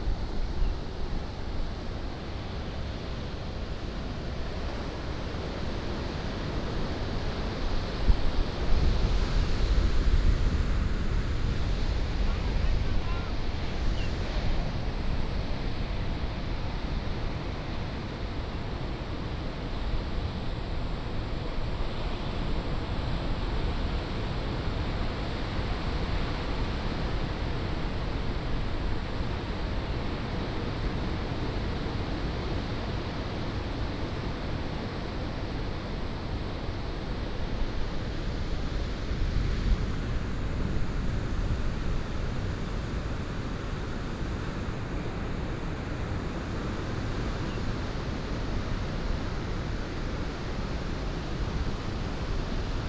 Sound of the waves, Very hot weather